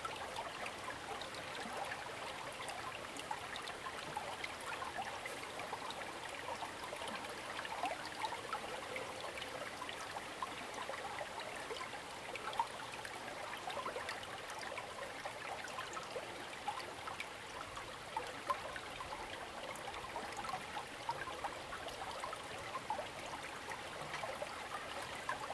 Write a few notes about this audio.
Brook, In the river, stream, traffic sound, birds, Zoom H2n MS+XY